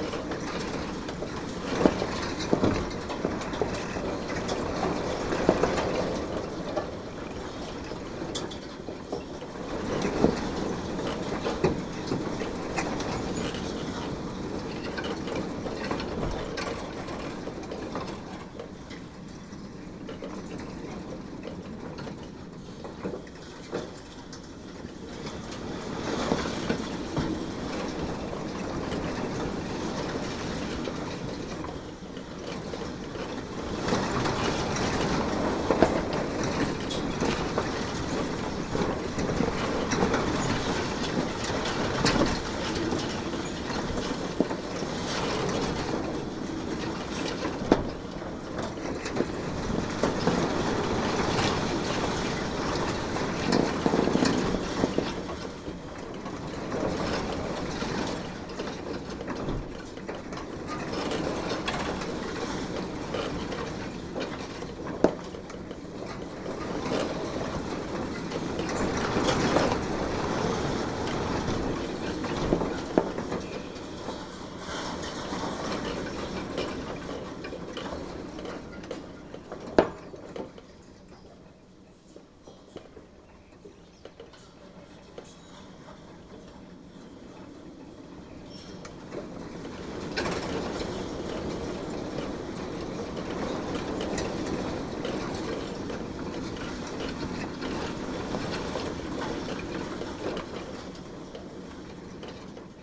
Hermankova ulica, Maribor, Slovenia - fence with vines and wind 1
this stretch of chain link fence was in direct contact with clinging vines that blew in the wind
16 June 2012